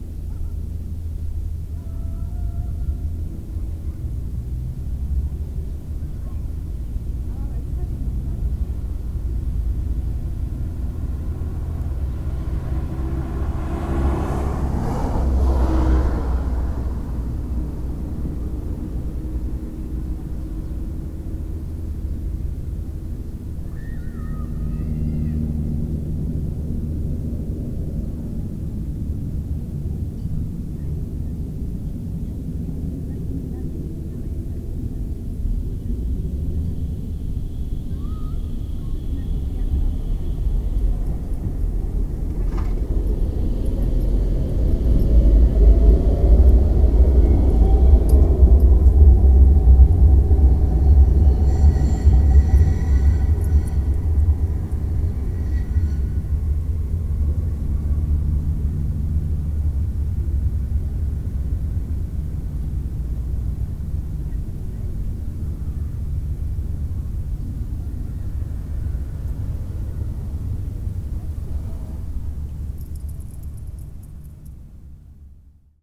Sitting in the meadow with microphone facing north-east with tram line on the right and park on the left.
Recorder: Tascam DR-05